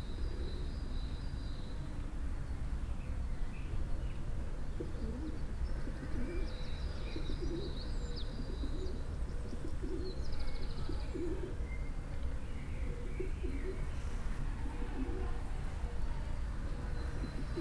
Christuskirche, Hamm, Germany - Easter Sunday April 2020
lingering on the old brig walls in the sun for a while, amazed of the quiet street and the sounds of flies gathering here in the warmth… the church is closed, no Easter gatherings here...